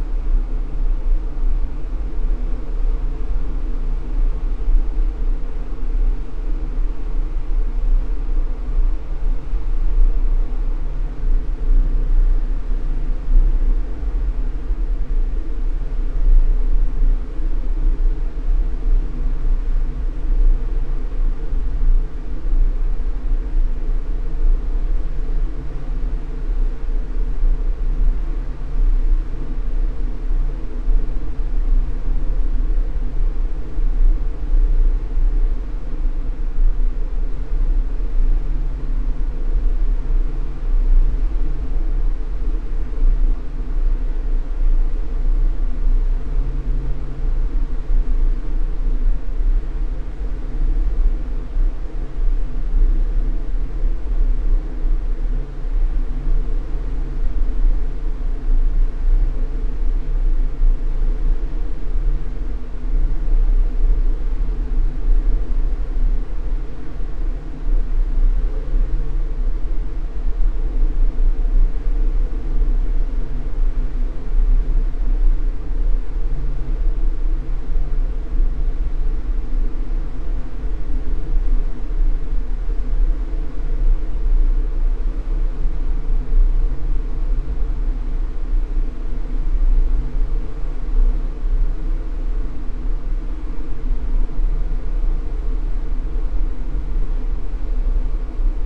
Genappe, Belgique - Pump
In the woods, there's a small house. It's a big pump, extracting water from the ground.
Genappe, Belgium